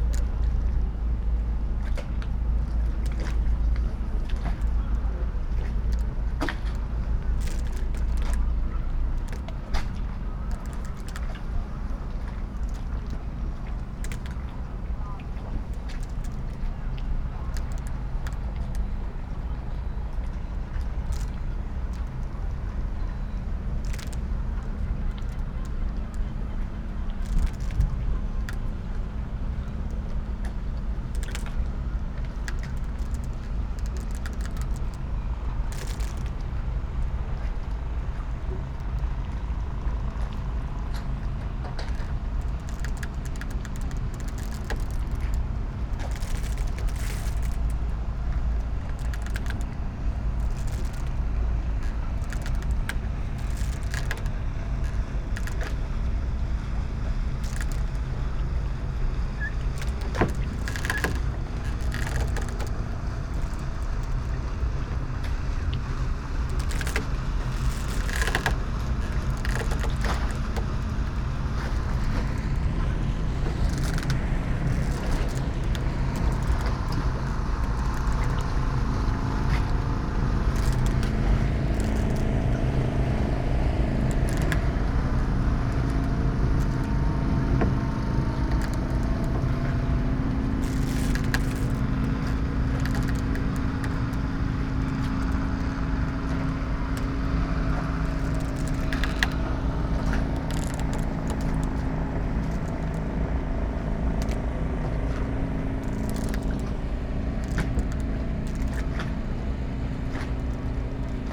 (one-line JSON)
{"title": "marina, Novigrad - squeaking sailing boat, ropes", "date": "2014-08-25 18:33:00", "description": "marina ambience, quiet afternoon, soft waves, wooden sailing boat, voices ...", "latitude": "45.32", "longitude": "13.56", "altitude": "18", "timezone": "Europe/Zagreb"}